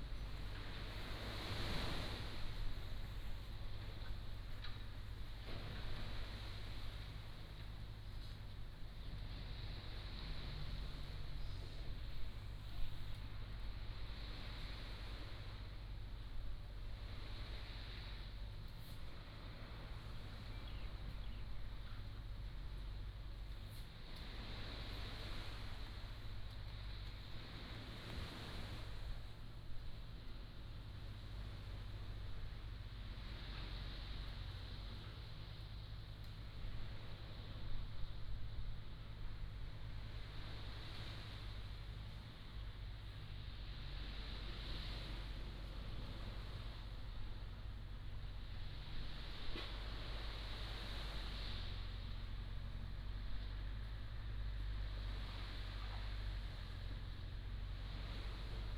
馬鼻灣海濱公園, Beigan Township - Waterfront Park
Waterfront Park, On the coast, Sound of the waves, Birds singing
福建省, Mainland - Taiwan Border, 15 October 2014, ~15:00